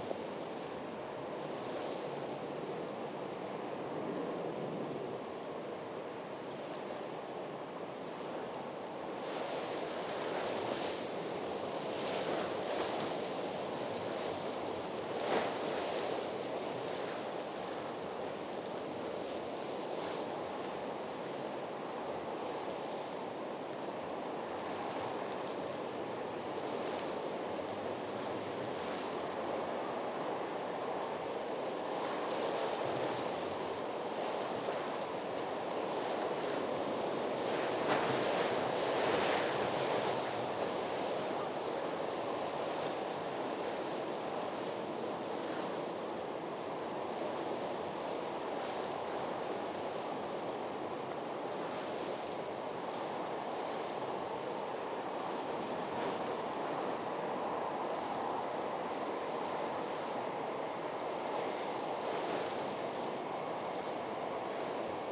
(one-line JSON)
{"title": "Tottenham Marshes, London - The Beginnings of Storm Katie", "date": "2016-03-27 14:00:00", "description": "The shotgun microphone was placed close to the ground, facing towards the River Lea Navigation inside a blimp. The sound of aircraft, trains and traffic were particular dominant but also hidden beneath the city noises was the rustling and squeaking of straw. I tried to capture the effect of the wind by placing it closer to the ground and plants.", "latitude": "51.60", "longitude": "-0.05", "altitude": "10", "timezone": "Europe/London"}